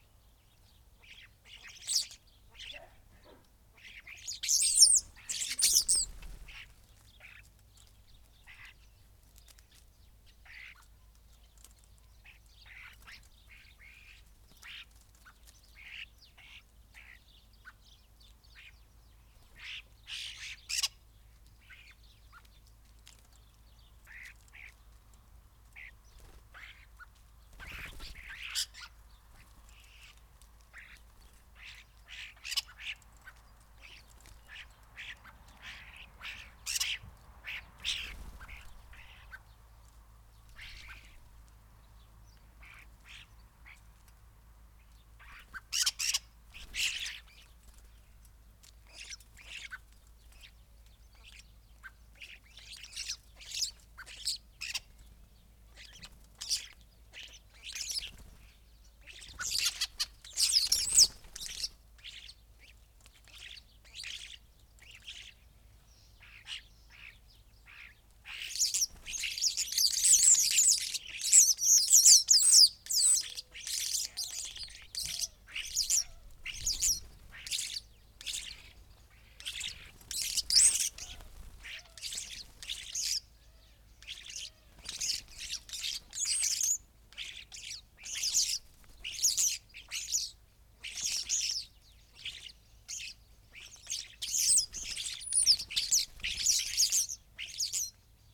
Luttons, UK - starlings on bird feeders ...
starlings on bird feeders ... open lavalier mic clipped to bush ... mono recording ... bird calls from ... greenfinch ... blackbird ... collared dove ... dunnock ... some background noise ...